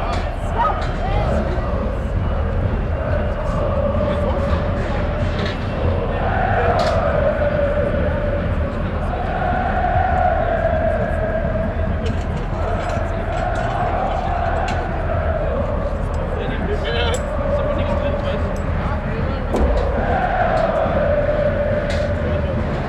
At the RWE soccer station during a soccer cup match. The sound of fireworks, fans chanting, the voice of the stadium speaker and the voice of the security guards, police and their dogs.
Am RWE Stadion während eines Pokal Spiels. Der Klang von Feuerwerkkörpern, Fangesänge, die Stimme des Stadionsprechers, Ordnern und Polizei mit ihren Hunden.
Projekt - Stadtklang//: Hörorte - topographic field recordings and social ambiences
Essen, Germany, 8 April 2014, 19:30